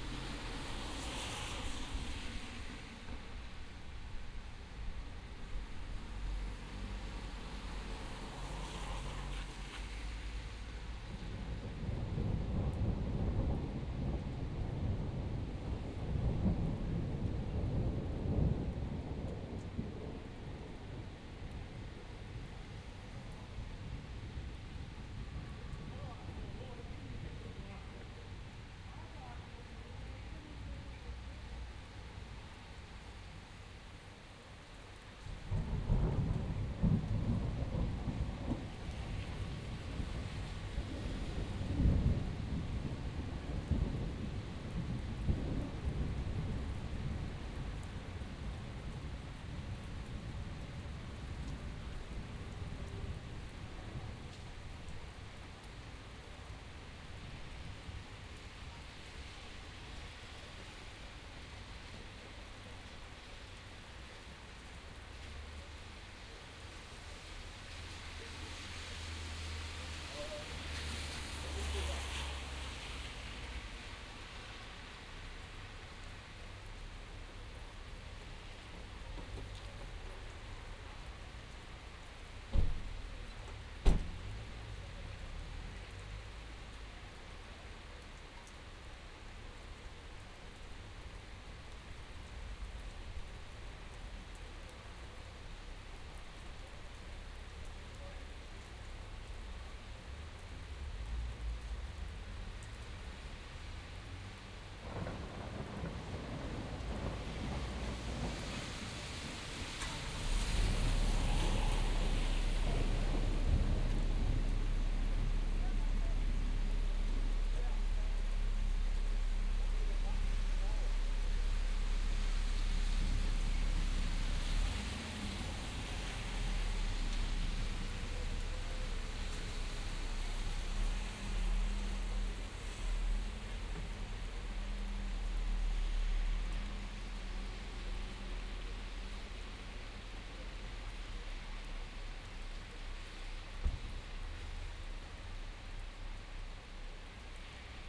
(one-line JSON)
{"title": "Thunderstorm, Cologne, Brabanter Strasse", "date": "2007-05-07 01:05:00", "description": "Thunderstorm and street atmo, Cologne city centre. Binaural, Zoom H4 + OKM.", "latitude": "50.94", "longitude": "6.94", "altitude": "56", "timezone": "GMT+1"}